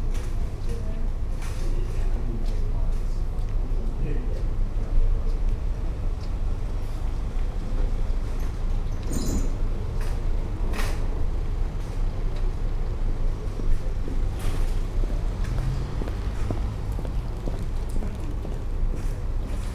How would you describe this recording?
A typical day outside the supermarket. Shopping trollies, people, sliding doors of the supermarket, distant sounds of the large car park. MixPre 6 II with 2 x Sennheiser MKH 8020s